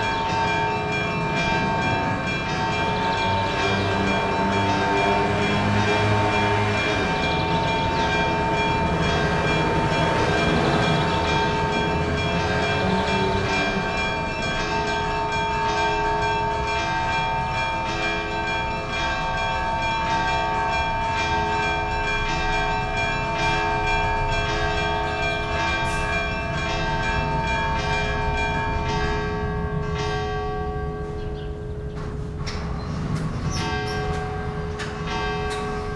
hotel continental, almost empty in autumn. morning sounds and bells from the nearby church